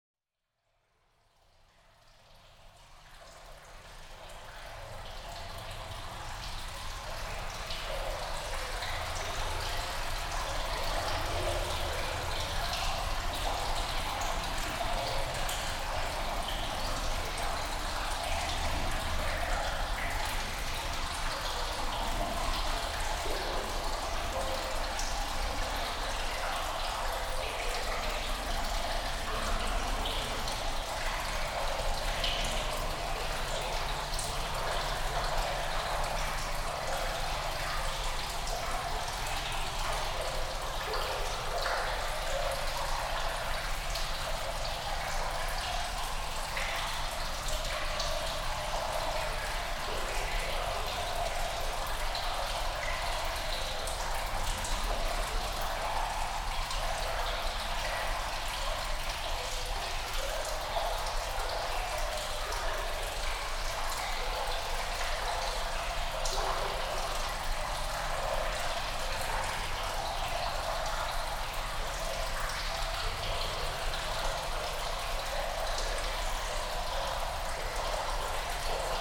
Konsul-Smidt-Straße, Bremen, Germany - Echoey tunnel
Recording the echoey sounds of water in a tunnel.